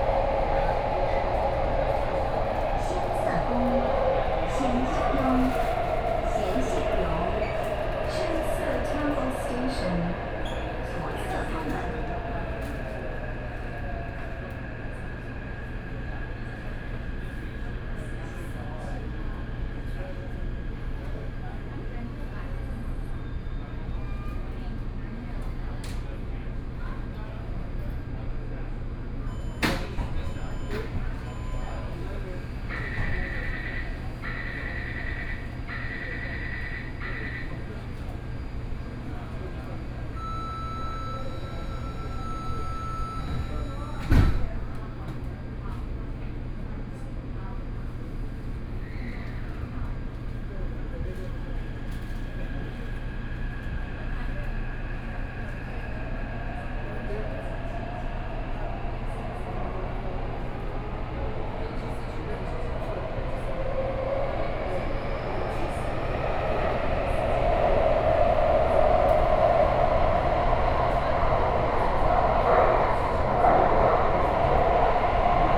Sanchong District, New Taipei City - Xinzhuang Line (Taipei Metro)
from Sanchong Station to Touqianzhuang Station, Sony PCM D50 + Soundman OKM II